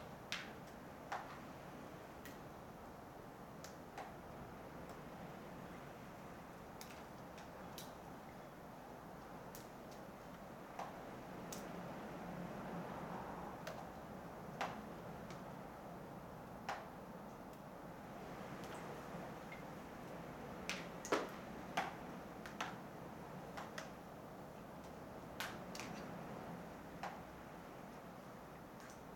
In an old abandonned building in the first settlement of the city the water is pouring out of the cellar and the ice is everywhere.
Komi Republic, Russia, 2 June 2013